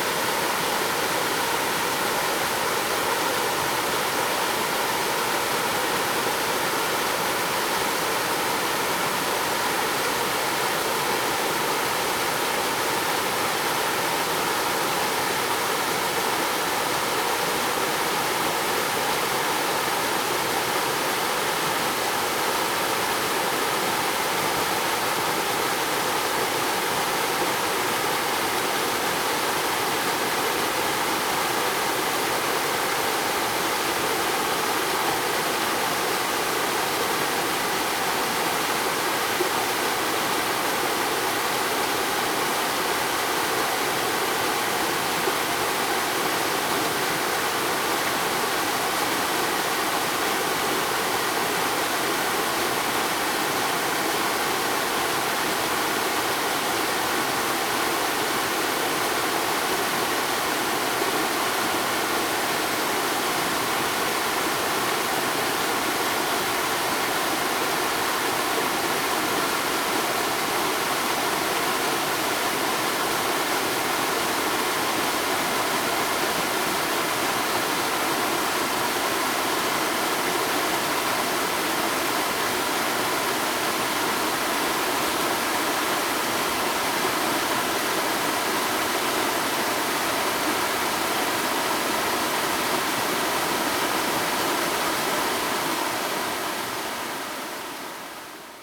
觀音瀑布, 埔里鎮蜈蚣里, Taiwan - There are waterfalls in the distance
waterfall, There are waterfalls in the distance
Zoom H2n MS+ XY